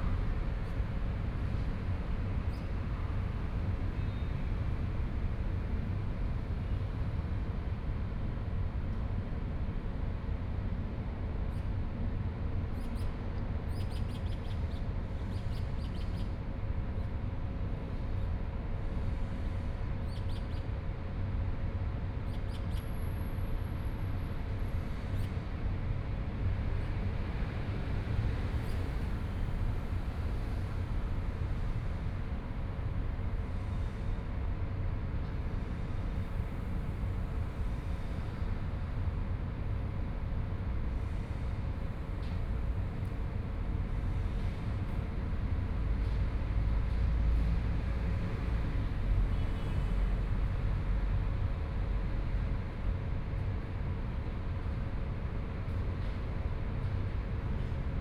Environmental sounds, Traffic Sound, Birds
Taipei City, Taiwan, 3 April 2014, 11:54